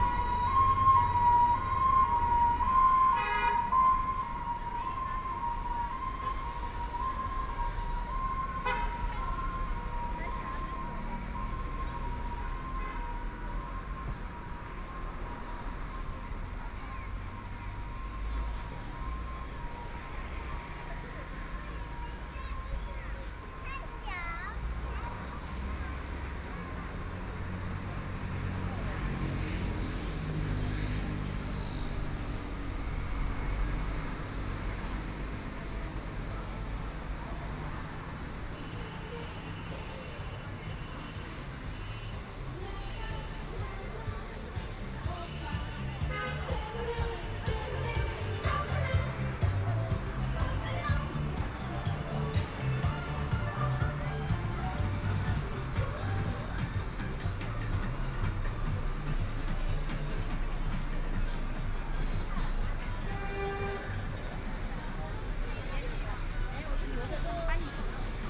North Sichuan Road, Shanghai - on the road
Walking on the road, After driving an ambulance warning sound, Traffic Sound, Store noisy sound, Binaural recording, Zoom H6+ Soundman OKM II
Shanghai, China, 23 November 2013